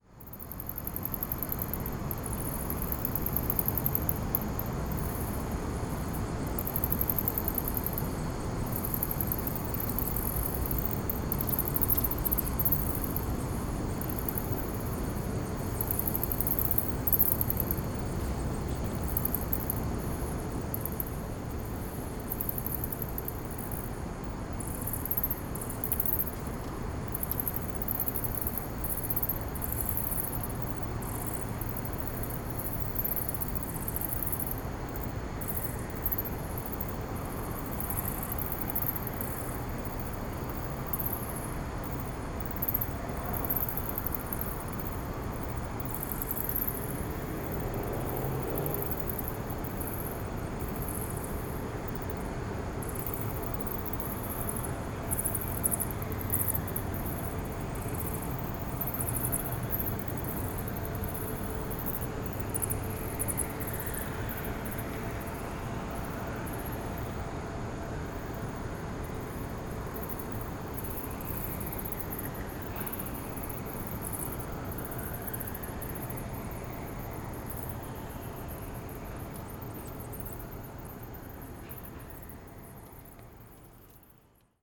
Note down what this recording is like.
Recorded in a canoe under the Congress Bridge with a Marantz PMD661 and a pair of DPA4060s